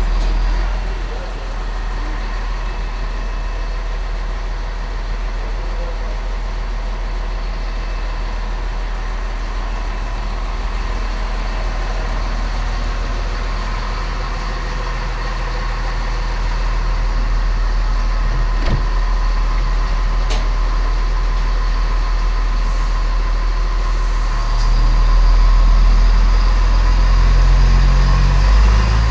mettmann, brücker str, ein-und abfahrt regiobahn
ein- und ausfahrt der diesel-lokomotive des regionalverkehrs
- soundmap nrw
project: social ambiences/ listen to the people - in & outdoor nearfield recordings